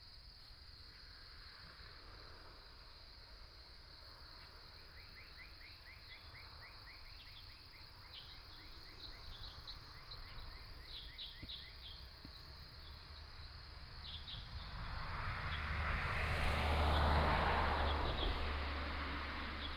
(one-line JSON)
{"title": "玉長公路, Fuli Township - Birdsong", "date": "2014-10-09 07:19:00", "description": "Birdsong, Next to the highway, Traffic Sound", "latitude": "23.27", "longitude": "121.36", "altitude": "393", "timezone": "Asia/Taipei"}